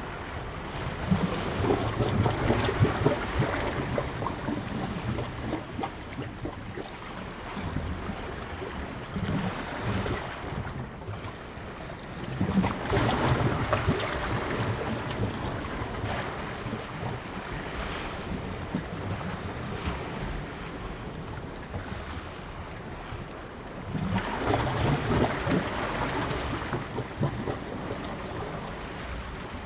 East Pier, Whitby, UK - Gurgling under the slip way ...
Gurgling under the slip way ... East Pier Whitby ... open lavalier mics clipped to sandwich box ... almost flat calm sea ... overcast ...
29 August 2017